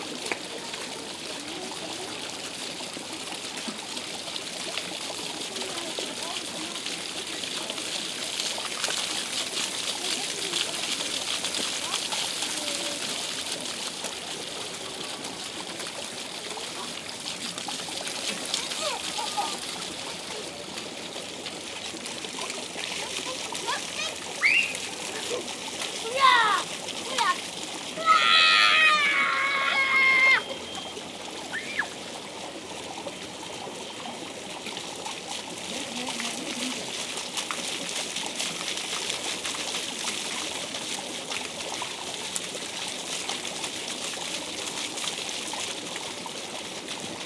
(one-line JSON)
{"title": "Leuven, Belgique - Chilren in the fountains", "date": "2018-10-13 14:20:00", "description": "Children loudly playing into the fountains.", "latitude": "50.89", "longitude": "4.70", "altitude": "17", "timezone": "Europe/Brussels"}